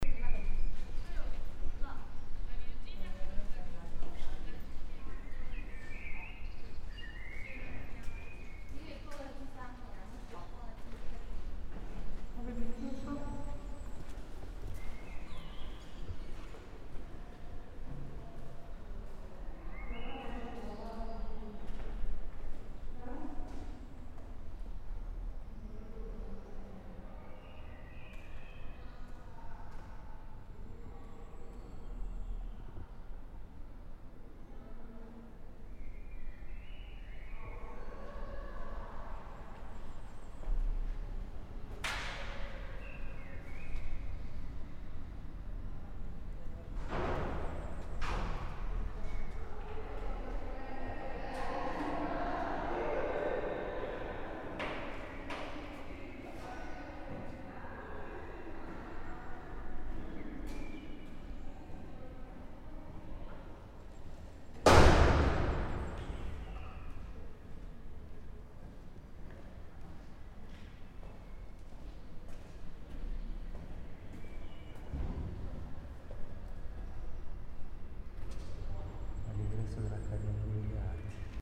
people speaking, birds, steps, door slap, reverb.
Perugia, Italy, 23 May 2014